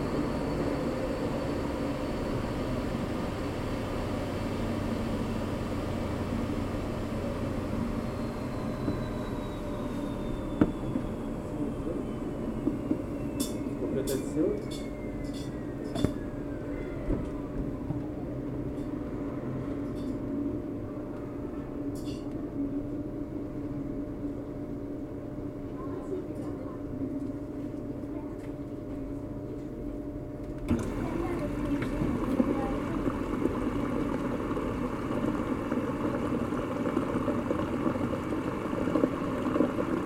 Belleville, Paris, France - Lavarie, Belleville
Lavarie
Zoom H4n
August 2014